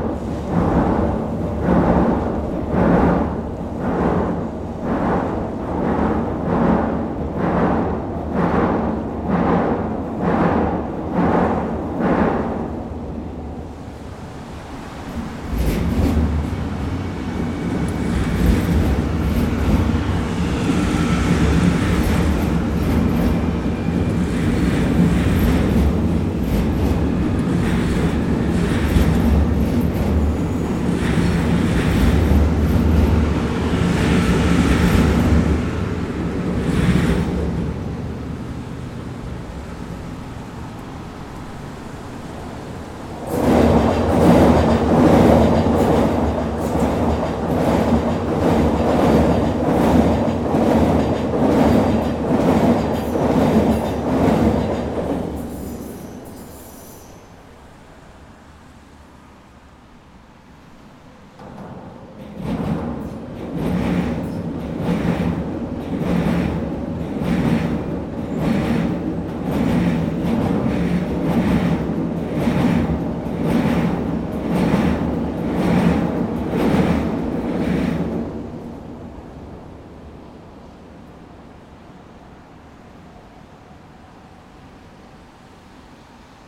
Norrmalm, Stockholm, Suecia - trains, cars and water
Creuament de camins, ponts i transports.
Crossing roads, ports and transport.
Cruce de caminos, puentes y transportes.